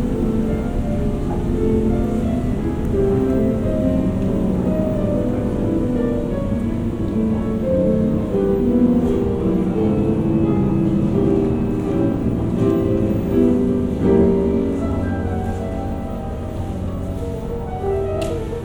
Piano à la gare Matabiau, Bonnefoy, Toulouse, France - Piano à la Gare Matabiau
Tout s'est enchainé comme une partition, le piano, l'escalator, les enfants jouant à des jeux en bois, j'ai déambulé prise en pleine immersion dans un paysage que les inconnus jouent pour le plaisir des oreilles. A moi de le saisir, et de vous le faire partager.
Prise son avec des micros binauraux et un zoom h4n, à ECOUTER AU CASQUE : sons à 360 degré.
16 January